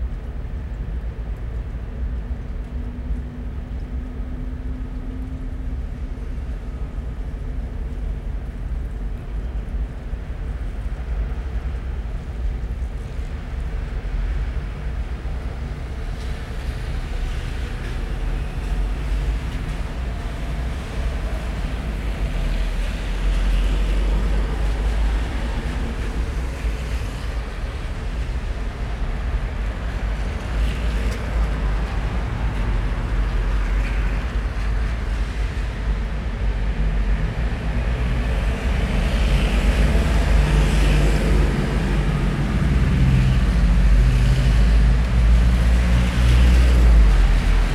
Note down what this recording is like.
The hum of the city, with sirens, taxis and people, on a rainy night in Manchester. Recorded from a third floor window.